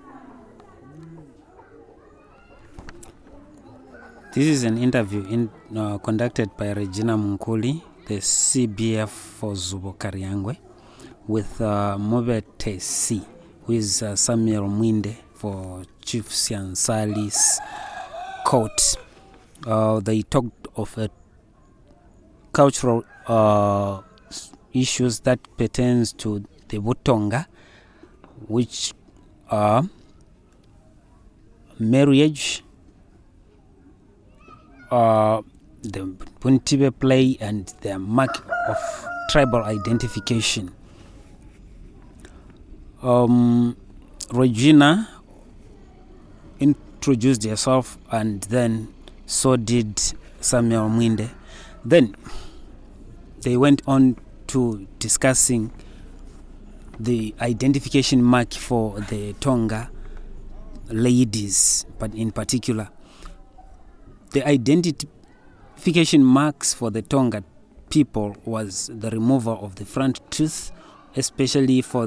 Mr Mwinde himself provides an English summary of the interview.
recordings from the radio project "Women documenting women stories" with Zubo Trust.
Zubo Trust is a women’s organization in Binga Zimbabwe bringing women together for self-empowerment.